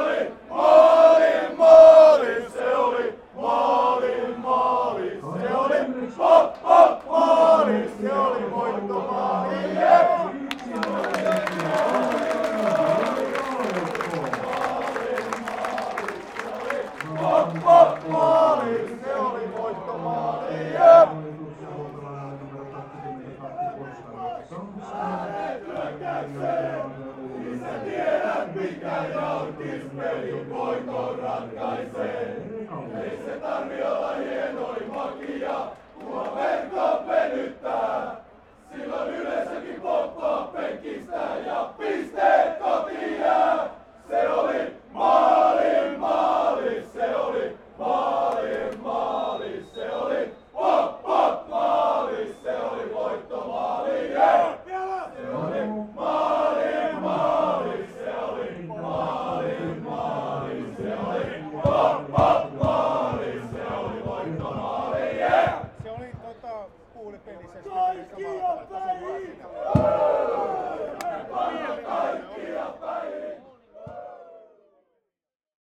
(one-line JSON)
{"title": "Raatin stadion, Oulu, Finland - AC Oulu supporters celebrating a goal", "date": "2020-08-01 18:41:00", "description": "AC Oulu supporters celebrating a game winning goal scored by the home team at the final moments of the first-division match against Jaro. Zoom H5, default X/Y module.", "latitude": "65.02", "longitude": "25.46", "altitude": "1", "timezone": "Europe/Helsinki"}